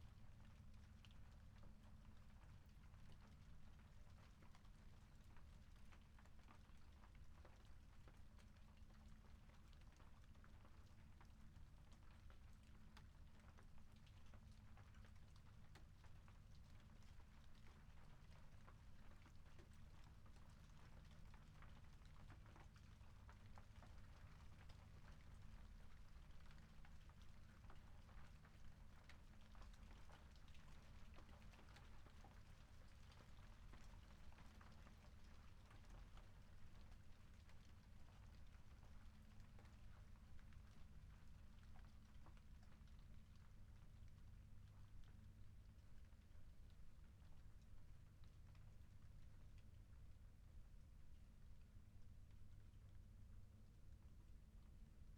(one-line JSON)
{"title": "Chapel Fields, Helperthorpe, Malton, UK - occasional thunder ...", "date": "2019-08-04 21:15:00", "description": "occasional thunder ... SASS on a tripod ... bird calls ... starling ... background noise ... traffic etc ...", "latitude": "54.12", "longitude": "-0.54", "altitude": "77", "timezone": "Europe/London"}